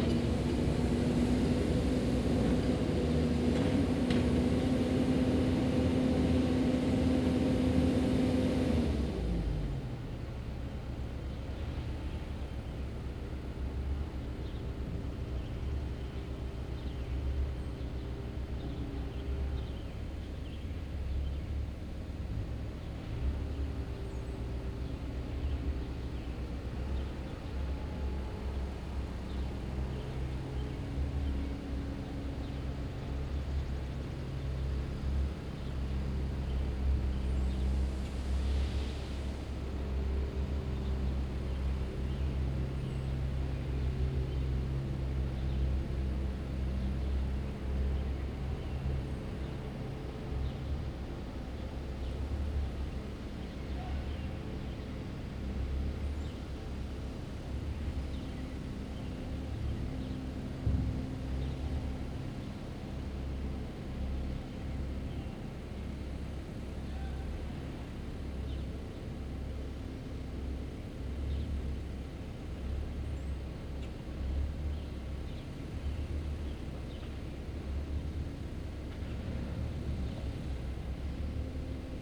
{"title": "St, Prairie Du Sac, WI, USA - Residential Street Resurfacing", "date": "2019-04-30 15:11:00", "description": "Road crew working to resurface a residential street. Jackhammer at 11ish minute mark. Recorded using a Tascam DR-40 Linear PCM Recorder on a tripod.", "latitude": "43.29", "longitude": "-89.73", "altitude": "238", "timezone": "America/Chicago"}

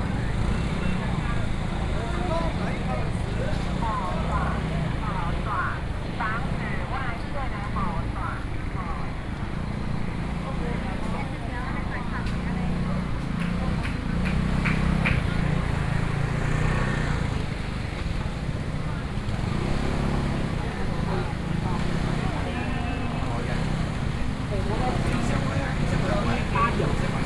{"title": "Yong'an St., Changhua City - soundwalk", "date": "2013-10-08 12:34:00", "description": "walking in the street, Traditional market and the Bazaar, Zoom H4n+ Soundman OKM II", "latitude": "24.08", "longitude": "120.55", "altitude": "30", "timezone": "Asia/Taipei"}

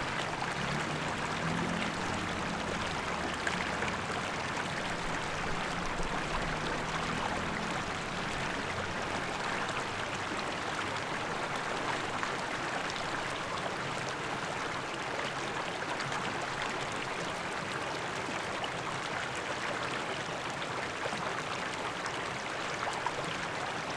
{"title": "Hsinchu County, Taiwan - the sound of water", "date": "2013-12-22 13:30:00", "description": "The sound of water, Binaural recording, Zoom H6+ Soundman OKM II", "latitude": "24.79", "longitude": "121.18", "altitude": "133", "timezone": "Asia/Taipei"}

